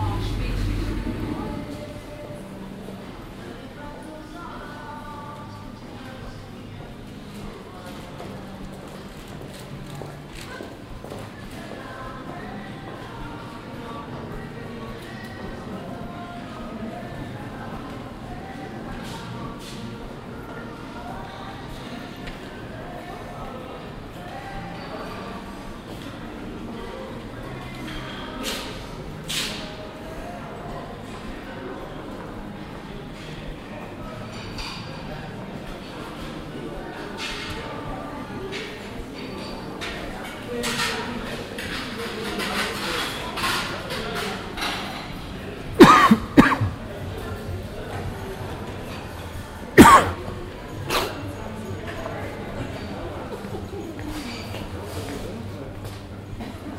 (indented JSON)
{
  "title": "hilden, bismarckpassage",
  "description": "klassische kleinstädtische einkaufspassage, schritte, stimmen, hintergrundsmusiken, mittgas\nsoundmap nrw:\nsocial ambiences/ listen to the people - in & outdoor nearfield recordings",
  "latitude": "51.17",
  "longitude": "6.94",
  "altitude": "56",
  "timezone": "GMT+1"
}